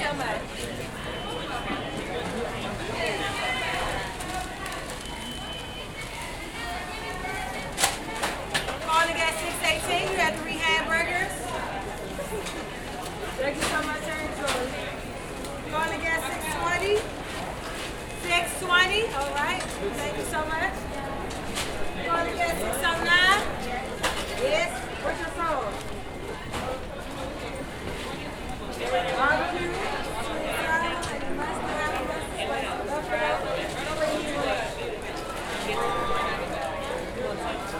North Capitol Street, Washington, DC, USA - Union Station McDonald
In front of the McDonald in Union Station.
A very busy Thursday noon.
December 2016